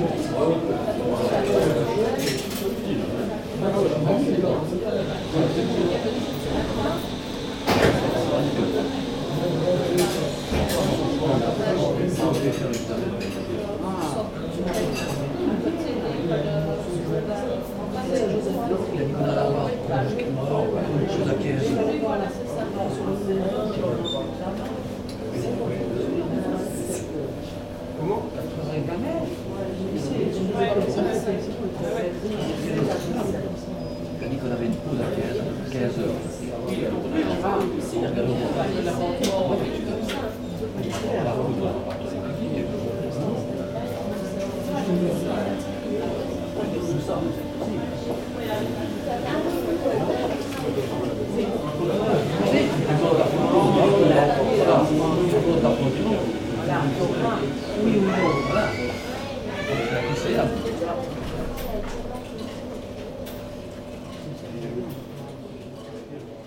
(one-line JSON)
{"title": "Seraing, Belgique - Police school", "date": "2015-11-24 08:10:00", "description": "In the police school, recording of a coffee time in a cafeteria.", "latitude": "50.61", "longitude": "5.51", "altitude": "61", "timezone": "Europe/Brussels"}